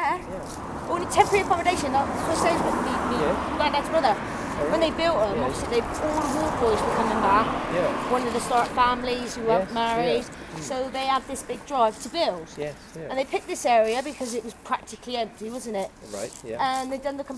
Walk Three: Building temporary houses after the war